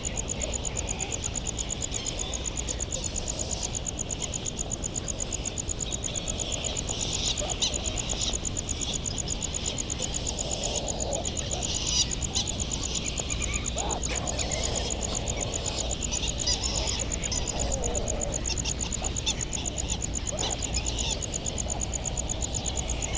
United States Minor Outlying Islands - Bonin Petrel soundscape
Recorded on the path to the All Hands Club ... Sand Island ... Midway Atoll ... recorded in the dark ... open lavalier mics ... flight calls and calls from bonin petrels ... calls and bill clapperings from laysan alabatross .. calls from white terns ... a cricket ticks away the seconds ... generators kick in and out in the background ...